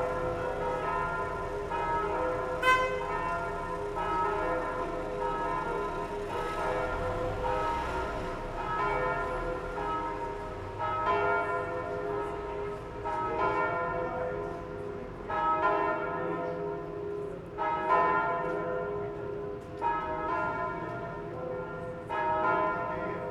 Ménilmontant, Paris - Peal of church bells on Sunday in Paris
On Ménilmontant street in Paris, church bells rang out inviting the faithful to the Sunday mass. at "Église Notre Dame de La Croix". Recorded from the window of a building in front.
Recorded by a MS Setup Schoeps CCM41+CCM8
On a Sound Devices 633 Recorder
Sound Ref: FR160221T01